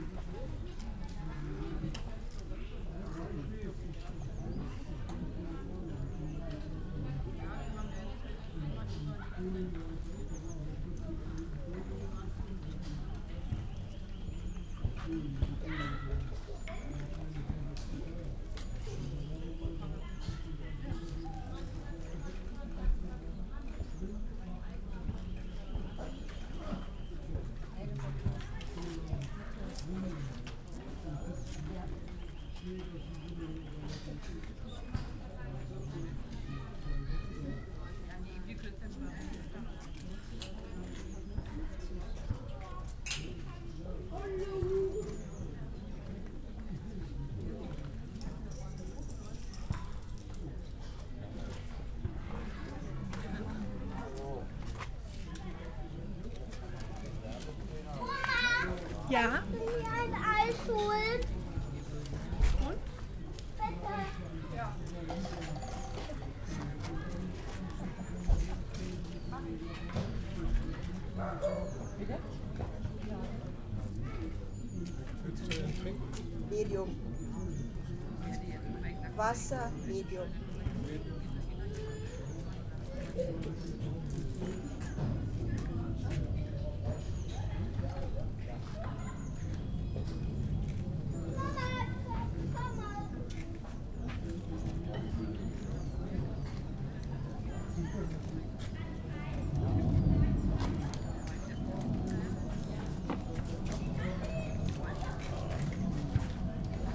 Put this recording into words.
this place near the lake has seen many Sunday tourists and trippers during the last 100 years, now it's almost abandoned, only a small kiosk is left, though many people have a rest here, on this sunny early spring afternoon. (SD702, DPA4060)